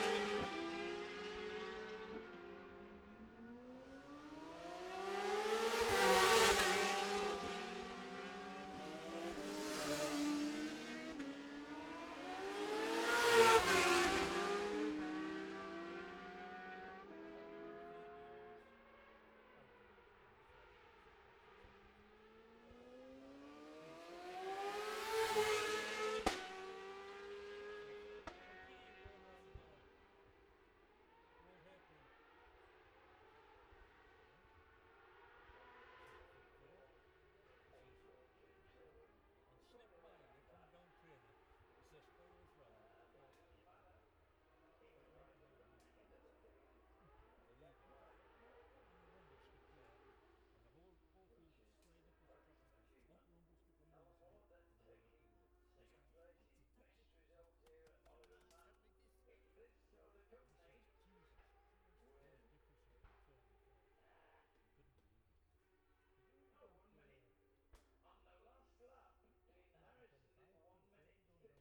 Jacksons Ln, Scarborough, UK - olivers mount road racing ... 2021 ...
bob smith spring cup ... 600cc heat 3 race ... dpa 4060s to MixPre3 ...